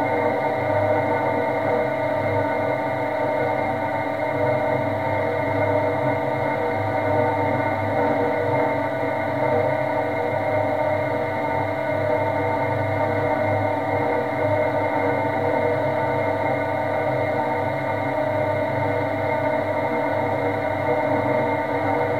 {"title": "Quai Saint-Pierre, Toulouse, France - hydroelectric power station", "date": "2021-05-29 13:00:00", "description": "The EDF Bazacle Complex, hydroelectric power station\nVibration Pickup C411 PP AKG on the turbine\nZoom H4n", "latitude": "43.60", "longitude": "1.43", "altitude": "137", "timezone": "Europe/Paris"}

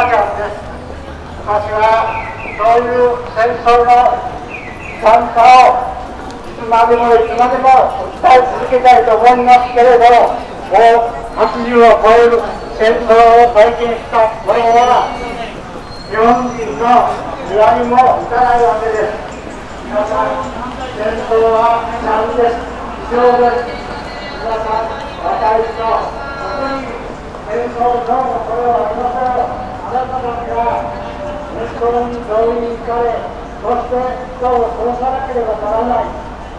{"title": "pedestrian crossing, Shijo Kawaramachi, Kyoto - crossings sonority", "date": "2014-11-06 18:27:00", "latitude": "35.00", "longitude": "135.77", "altitude": "55", "timezone": "Asia/Tokyo"}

